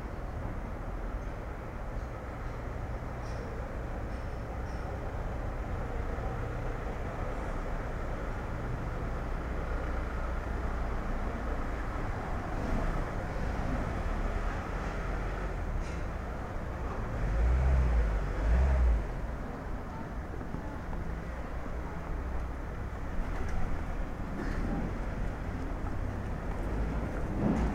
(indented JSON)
{
  "title": "Rua de Sāo Joāo, Angra do Heroísmo, Portugal - Rua São João",
  "date": "2019-11-08 09:57:00",
  "description": "These recordings are part of the Linschoten Workshop, a work done with the students of the Francisco Drummond school of eighth year.\nA sound landscape workshop with which a mapping has been made walking the city of Angra do Heroísmo, a world heritage site, through the Linschoten map, a map of the XVi century, which draws the Renaissance city. With the field recordings an experimental concert of sound landscapes was held for the commemorations of UNESCO. 2019. The tour visits the city center of Angra. Jardim Duque da Terceira, Praça Velha, Rua Direita, Rua São João, Alfandega, Prainha, Clube Náutico, Igreja da Sé, Igreja dos Sinos, Praça Alto das Covas, Mercado do Duque de Bragança-Peixeria.\nRecorded with Zoom Hn4pro.\n*In front of a really good bakery.",
  "latitude": "38.65",
  "longitude": "-27.22",
  "altitude": "22",
  "timezone": "Atlantic/Azores"
}